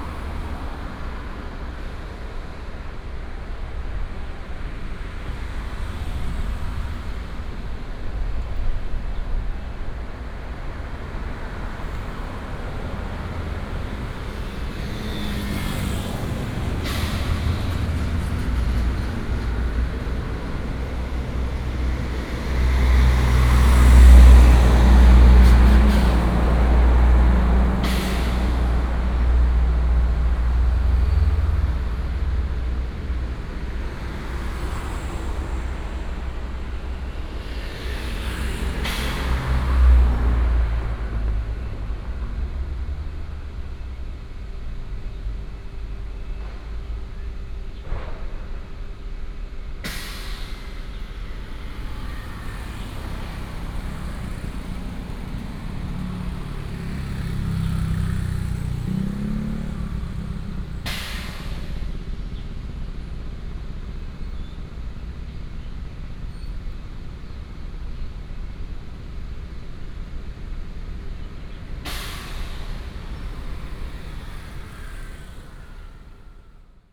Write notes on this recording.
Next to the factory, traffic sound